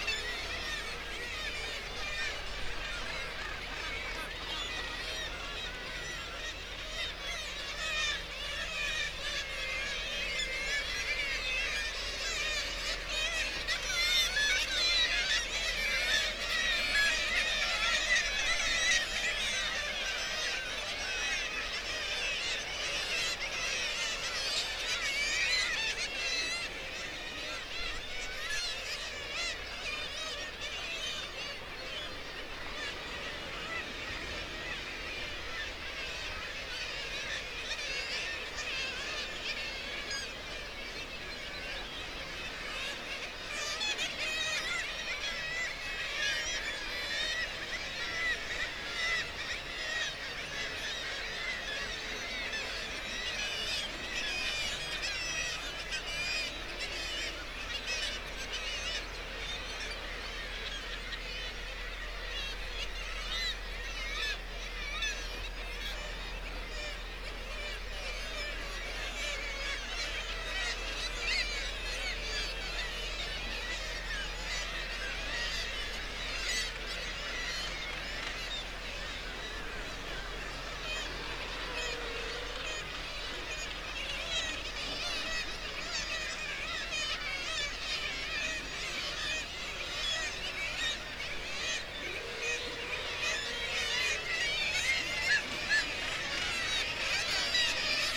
Bempton, UK - Kittiwake soundscape ...
Kittiwake soundscape ... RSPB Bempton Cliffs ... kittiwake calls and flight calls ... guillemot and gannet calls ... open lavaliers on the end of a fishing landing net pole ... warm ... sunny morning ...